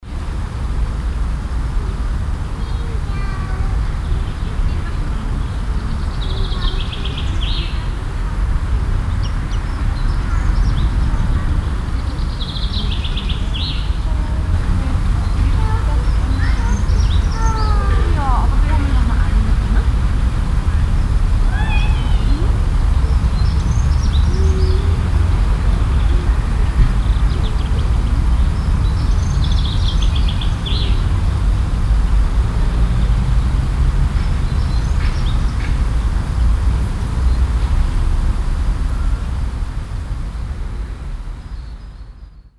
mutter mit kind und kinderwagen, winde und verkehrslärm der nahen srasse
soundmap nrw:
social ambiences, topographic fieldrecordings
stadtpark, am weg